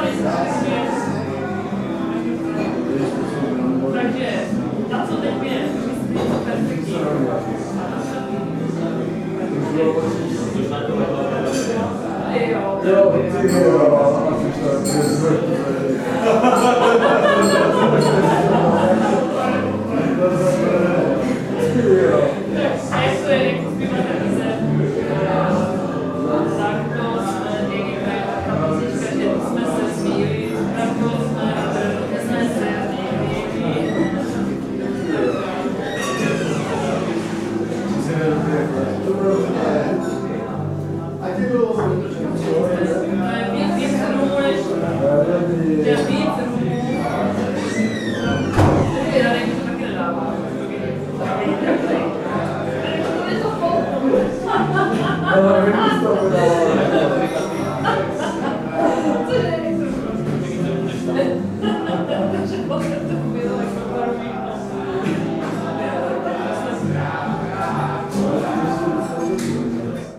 Český Krumlov, Tschechische Republik - Vlašský dvůr
Vlašský dvůr, Dlouhá 32, 38101 Český Krumlov